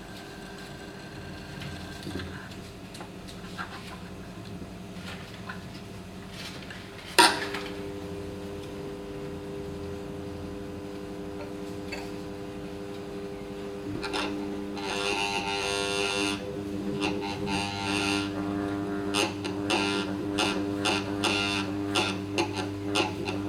Lörick, Düsseldorf, Deutschland - Düsseldorf, Wevelinghoferstr, kybernetic op art objects
The sound of kybernetic op art objects of the private collection of Lutz Dresen. Here no.05 a metal needle on a string attached to a wooden board with an electro magnetic motor inside.
soundmap nrw - topographic field recordings, social ambiences and art places
Düsseldorf, Germany